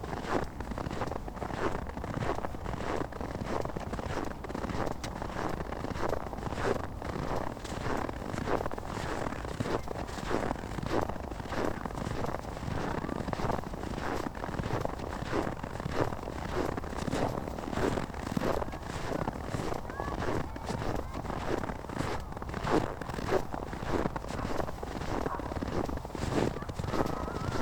berlin, tempelhofer feld: grasland - DOPAL: grassland
cold and windy afternoon (-10 degrees celsius), snow walk, steps in the snow, short description of the situation by hensch
Descriptions Of Places And Landscapes: december 4, 2010
4 December 2010, 16:01